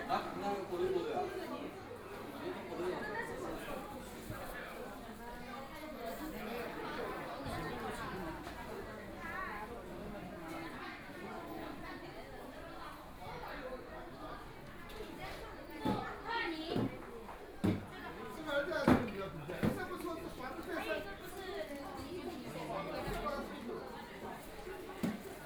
FuJia St., Shanghai - Market
Walking through the streets in traditional markets, Binaural recording, Zoom H6+ Soundman OKM II
Huangpu, Shanghai, China, 29 November 2013